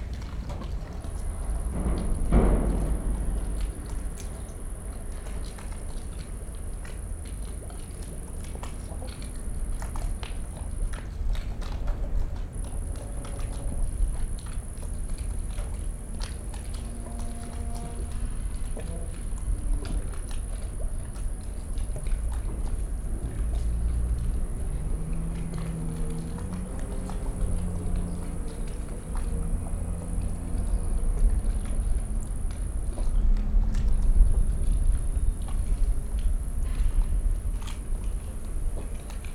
Niehler Hafen, Cologne, Germany - harbour, evening ambience
harbour Köln-Niehl, at water level, small waves hitting the body of a ship. a cricket. distant sounds of harbour work. heavy drones of a cargo train passing above me. quiet squeaking from the ship as it moves in the light breeze.
(Sony PCM D50, DPA4060)
Nordrhein-Westfalen, Deutschland, European Union, 18 July 2013